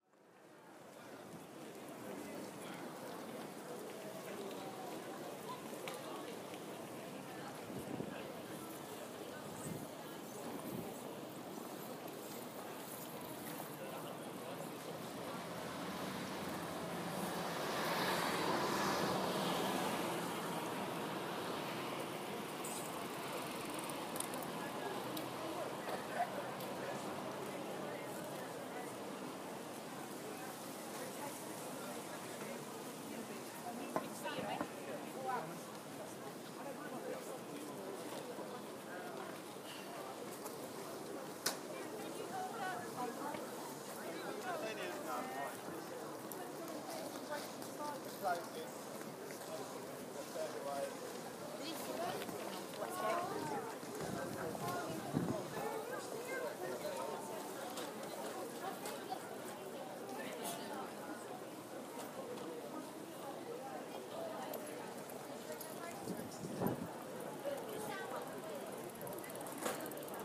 Oxford, Oxfordshire, Reino Unido - Bonn Square
Bonn Square is a dynamic public space located in the centre of Oxford